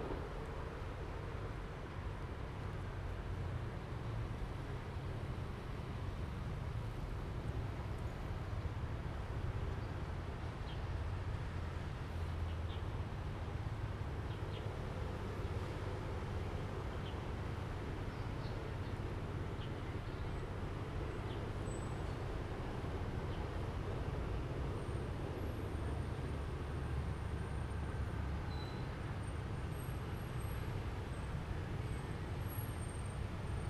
同心園, 苗栗市 Miaoli City - Next to the tracks
The train runs through, Next to the tracks, Fireworks sound, Bird call, Dog sounds
Zoom H2n MS+XY
Miaoli County, Taiwan